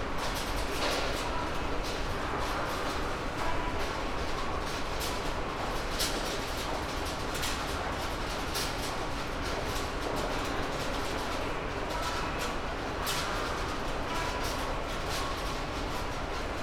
Tokio, Shibuya District, Kitasando subway station - grating rattle
two metal sheet flaps rattling moved by air-conditioning flow at a subway station